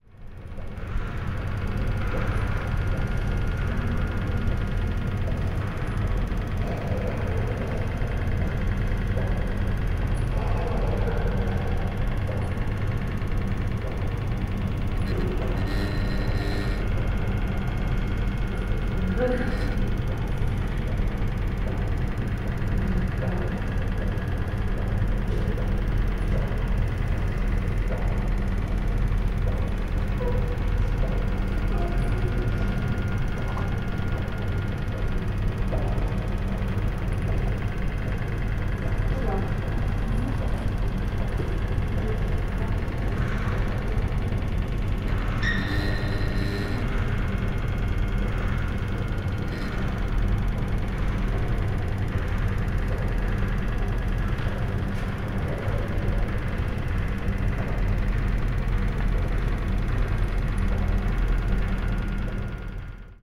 Musée d'Art Moderne et Contemporain, Strasbourg, Frankreich - Musée d'Art Moderne et Contemporain, Trames alternées
a work of kinetic art by Julio Le Parc, called Trames alternées. A polished dented metal disc kinked backwards along the middle line, rotating around an axis in a box with stripe patterns on its walls, creating changing patterns on the surface of the metal. Note the sound of the escalator in the background. Recorded with an Olympus LS 12 Recorder using the built-in microphones
France métropolitaine, France